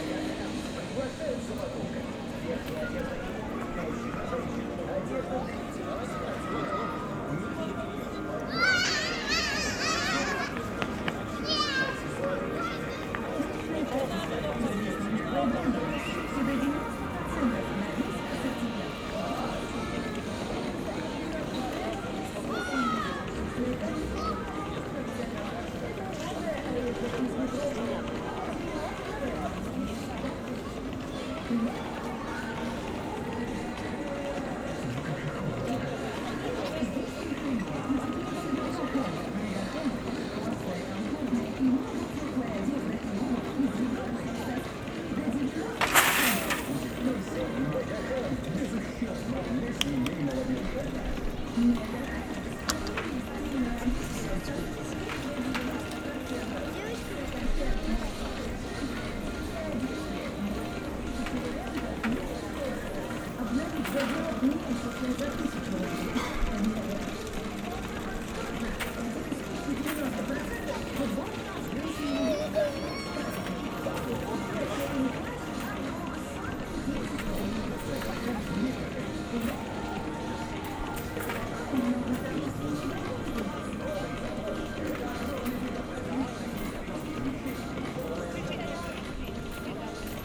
ул. Кирова, Челябинск, Челябинская обл., Россия - walking people, children, sound advertising, laughter, scattering of small coins.
One of the main walking roads of Chelyabinsk. People relax and go to the cafe. Lots of sculptures.